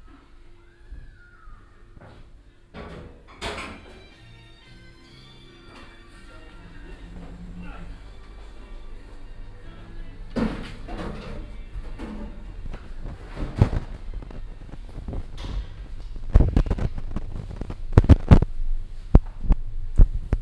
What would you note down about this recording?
inside the cafe room, gang und nutzung der herrentoilette, küchengeräusche, hintergrundmusik, mittags, soundmap nrw, - social ambiences/ listen to the people - in & outdoor nearfield recordings